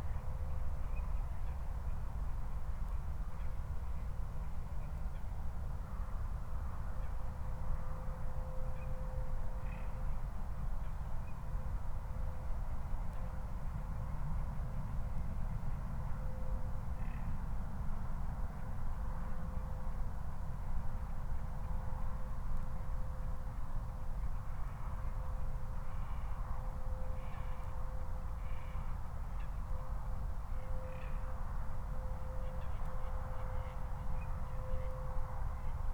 Moorlinse, Berlin Buch - near the pond, ambience
22:52 Moorlinse, Berlin Buch